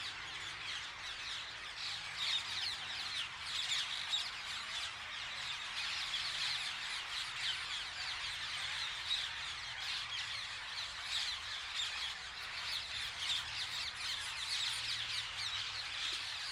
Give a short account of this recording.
At dawn and dusk everyday without fail a large number of Ring-Necked Parakeets roost in this tree - they spend the night and then do what they do during the day and return again at dusk. Its a very noisy experience, and can be heard at quite a distance from their roost. recorded using Mixpre6 and ORTF Rode NTG5 stereo pair.